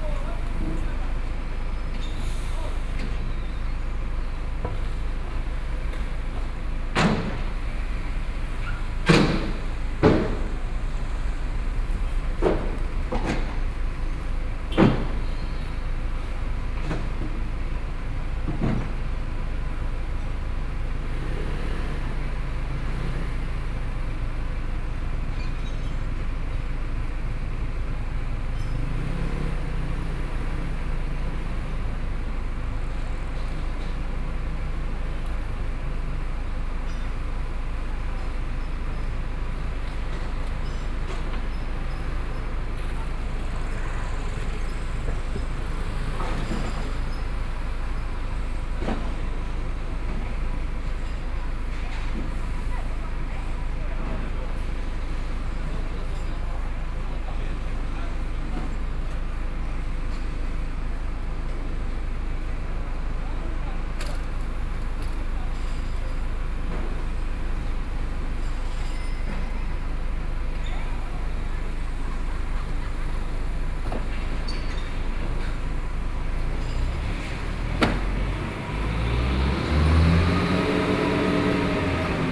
106台灣台北市大安區大學里 - Intersection
Construction noise, Demolition waste transporting bricks, The crowd on the road with the vehicle, Binaural recordings, Sony PCM D50 + Soundman OKM II